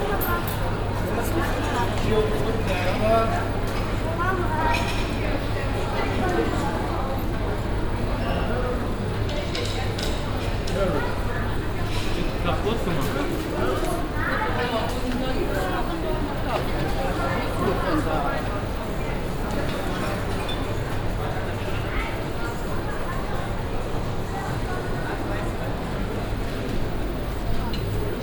aternoon in the shopping mall, different spoken languages, people on the central moving staircase, dense acoustic
soundmap nrw social ambiences/ listen to the people - in & outdoor nearfield recordings